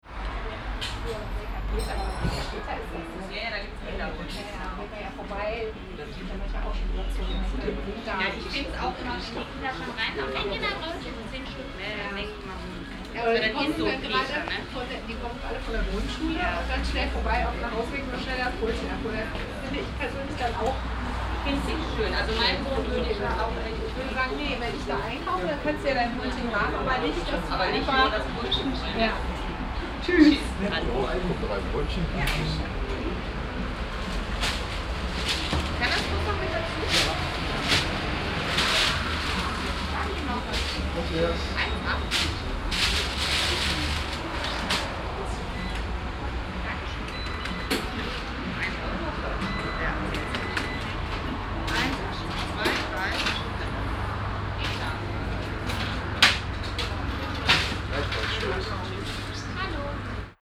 Stoppenberg, Essen, Deutschland - essen, stoppenberg, bakery
In einer Bäckerei. Der Klang der Stimmen der Verkäuferin und der Kunden plus Cafe Hintergrungsmusik.
Inside a bakery. The sound of the voices of the vender and her customer plus cafe background music.
Projekt - Stadtklang//: Hörorte - topographic field recordings and social ambiences
Essen, Germany, 29 April 2014